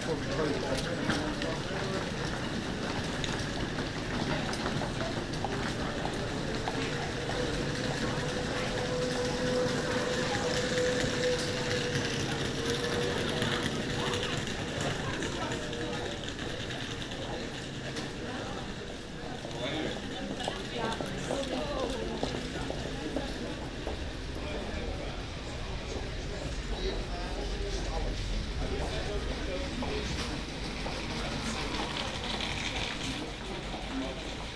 Schiphol, Nederland - Corridor on Schiphol
Binaural recording of passengers and employees walking between 'Departures 2' and 'Departures 3'.
Schiphol Amsterdam Airport, Amsterdam Airport Schiphol, The Netherlands, 1 July 2014, 10:46